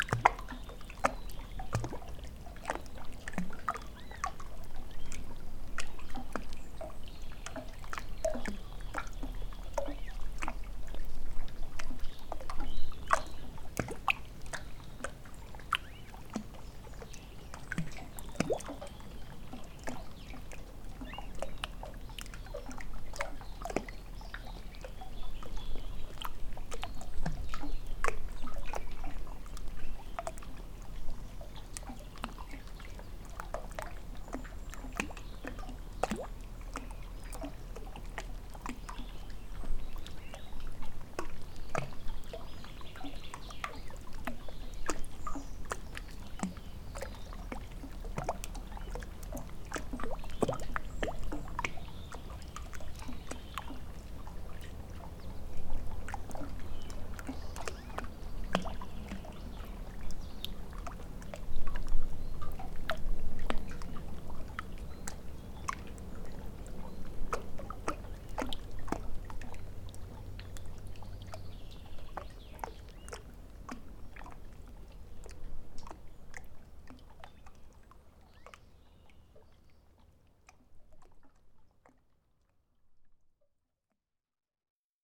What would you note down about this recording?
Casa submersa no rio Douro. Monte Valonsadero em Soria, Spain. An underwater house in the Douro river. Monte Valonsadero, Soria, Spain. Recorded in February, 2017.